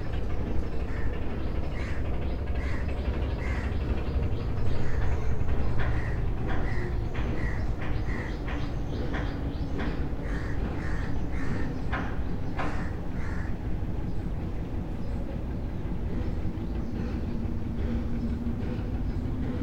A small dock on the bank of Rupsa river in Khulna. It was a holiday. So the dock was not busy. There were one or two repairing works going on.